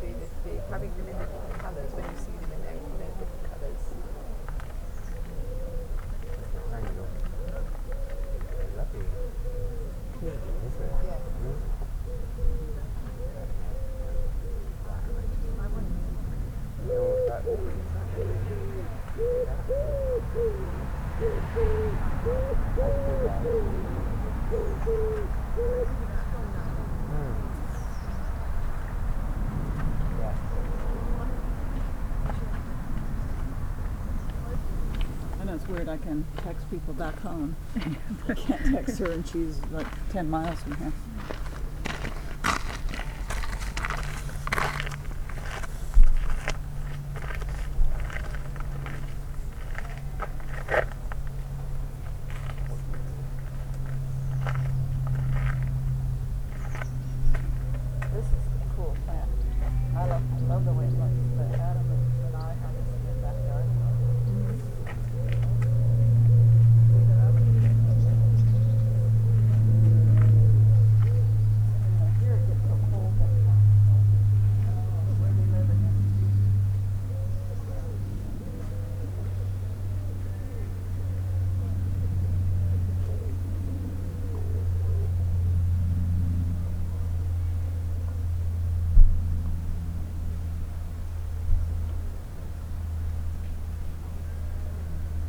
Hidcote Manor Gardens, Chipping Campden, Gloucestershire, UK - Gardens
The recorder is on the ground in a rucksack with the mics attached. It is close by a gravel path where many people pass. Beyond are ornamental gardens. Behind is a road to the car park.
I have found sometimes when the surface is good placing the mics on the ground gives a semi boundary mic effect.
MixPre 3 with 2 x Rode NT5s